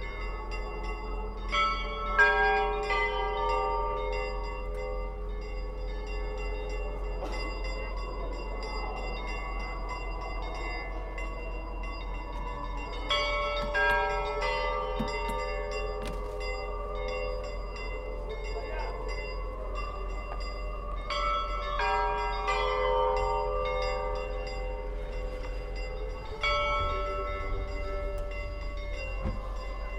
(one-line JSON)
{"title": "gorod Vorkuta, République des Komis, Russie - easter bells", "date": "2014-04-20 10:34:00", "description": "Eester bells rung by hand.", "latitude": "67.51", "longitude": "64.07", "altitude": "173", "timezone": "Europe/Moscow"}